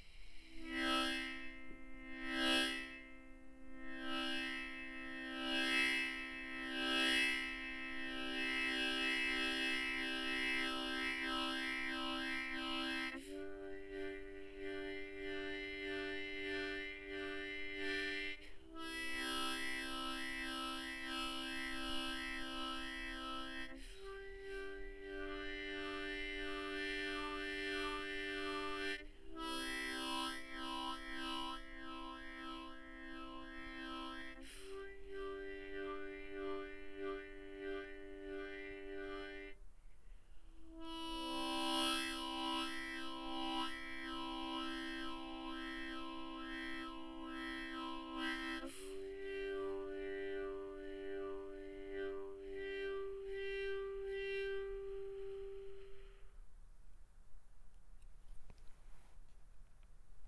Vermont St, Oakland, CA, USA - Basement Frog Harmonica
Used a Tascam DR40 and played a harmonica as I imagine a frog would
10 October 2018, 14:24